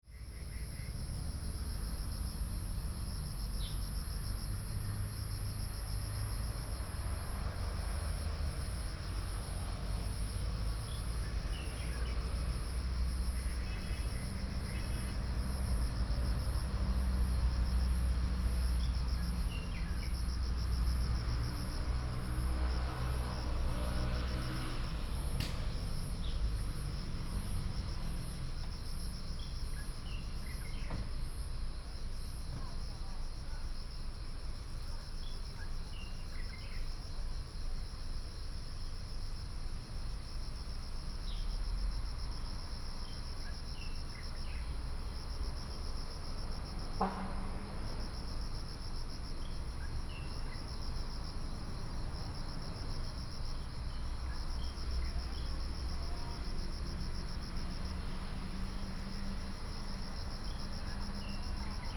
in the Park, Traffic sound, Insects sound, sound of the birds